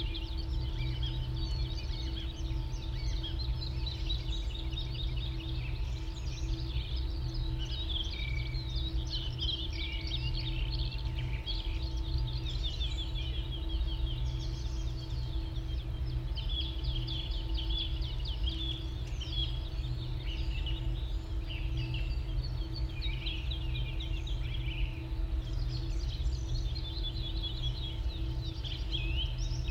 The generator sounds almost still there is so little wind. It's hum is quiet and gives space for the lovely bird song. The song thrush at this spot is a virtuoso and the woodlark so melodic.
Barnim, Brandenburg, Deutschland, 24 March, ~09:00